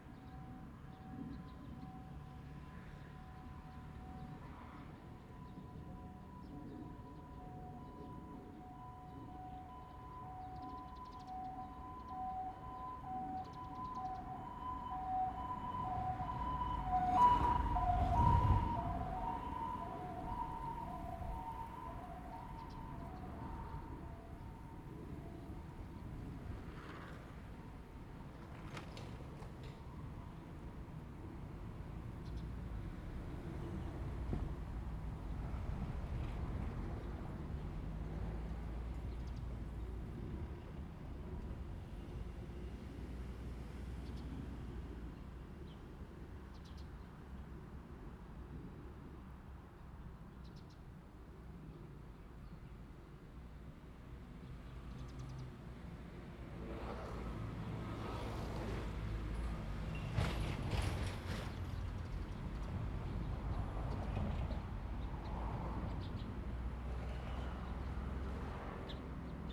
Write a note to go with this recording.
Next to the railroad tracks, The train runs through, Zoom H2n MS+XY